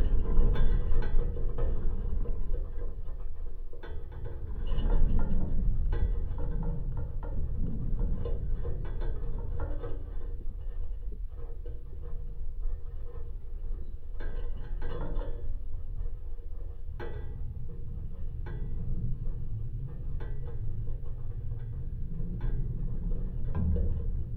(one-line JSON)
{"title": "Tauragnai, Lithuania, rusty wire", "date": "2020-06-13 16:05:00", "description": "some piece of rusty wire protruding from the ground. contact microphones", "latitude": "55.44", "longitude": "25.81", "altitude": "188", "timezone": "Europe/Vilnius"}